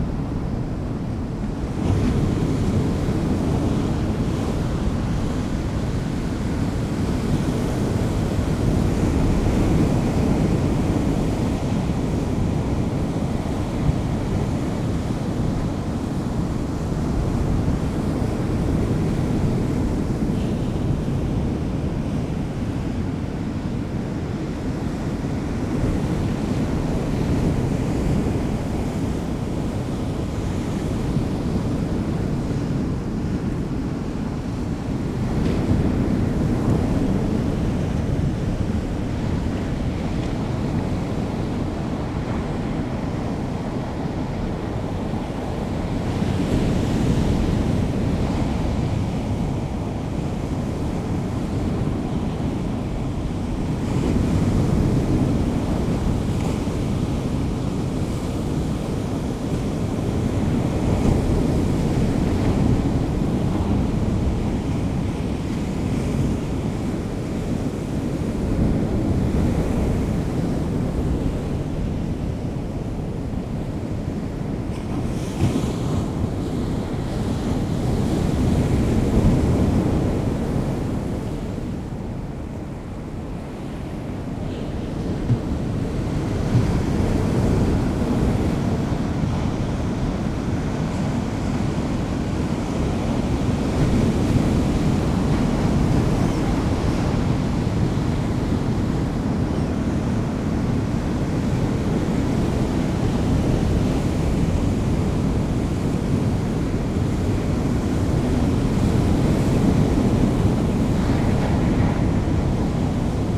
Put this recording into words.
incoming tide ... in the lee of a wall ... blowing a hooley ... lavalier mics clipped to a bag ...